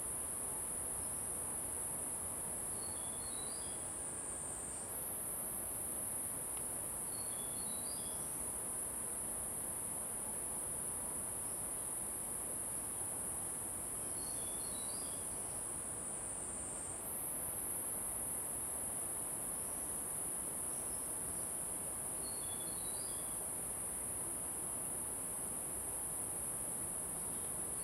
馬璘窟, Puli, Taiwan - Birds
Birds
Zoon H2n (XY+MZ) (2015/09/08 005), CHEN, SHENG-WEN, 陳聖文